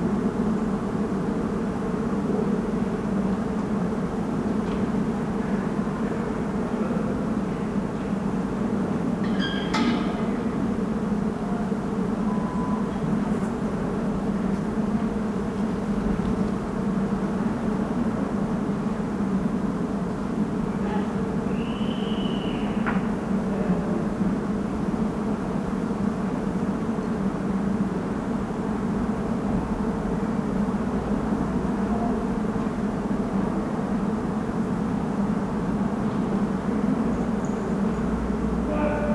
Schwartzkopffstraße, Berlin, Germany - Kirschbaum mit Bienen und anderen Insekten
Ein Sonntagmittag während des Corona-Lockdowns, ein Kirschbaum in voller Blüte mit, offenbar, Tausenden Insekten, vor allem Honigbienen.
A Sunday noon during the Corona-lockdown, thousands of all kinds of bees in a fully flowering cherry-tree.
Una domenica al mezzogiorno durante il cosi detto lockdown, migliaia di una grossa varieta di api in un albero di ciliege.